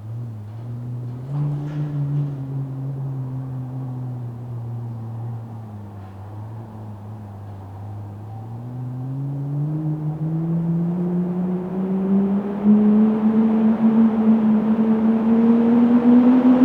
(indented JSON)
{
  "title": "Quartier Villeneuve - Village-Olympique, Grenoble, France - le chant du vent",
  "date": "2013-12-14 12:20:00",
  "description": "The song of the wind.\nIt is quite rare to meet a lucky configuration that allows us to hear (& record) not only the sound of the wind but also its song. Here is one where the wind flow is set in resonance by the slit under a door just like the mouth of a pipe organ, and then resonates, with all its variations of height, rythm and intensity, along the large corridor of the building.",
  "latitude": "45.16",
  "longitude": "5.73",
  "altitude": "226",
  "timezone": "Europe/Paris"
}